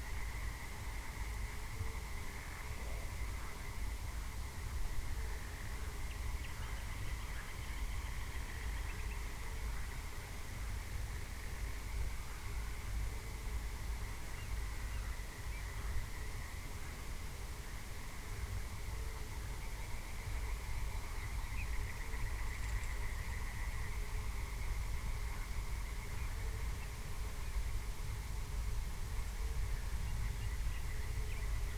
nightly field ambience, frogs and/or toads, horses, nithingale and other animals, music in the distance
the city, the country & me: may 26, 2017